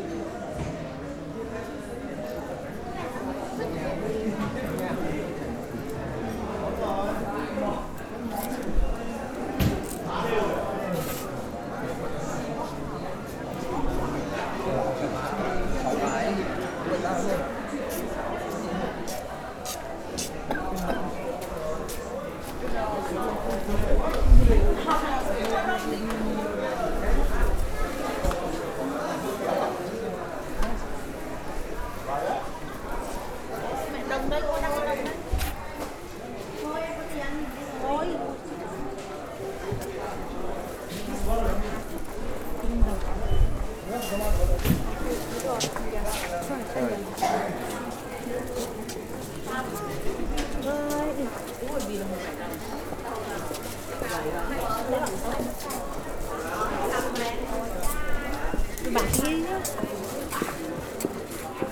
berlin, herzbergstraße: dong xuan center, halle - the city, the country & me: dong xuan center, hall 3
soundwalk through hall 3 of the dong xuan center, a vietnamese indoor market with hundreds of shops where you will find everything and anything (food, clothes, shoes, electrical appliance, toys, videos, hairdressers, betting offices, nail and beauty studios, restaurants etc.)
the city, the country & me: march 6, 2011